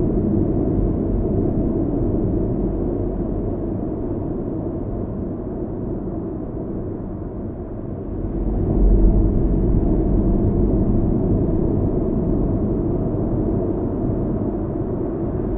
Biville, France - Blockhaus, Biville
Waves recorded inside a tube in a blockhaus at Bivalve. Zoom H6 and "canon" Neumann